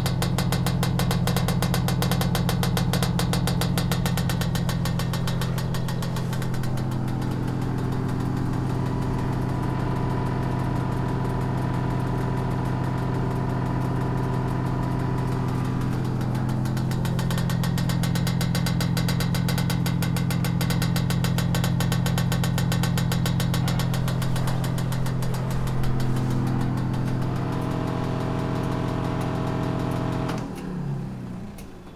from/behind window, Mladinska, Maribor, Slovenia - grater touching glass pot, electric oven with ventilator, apple pie
15 April, 19:49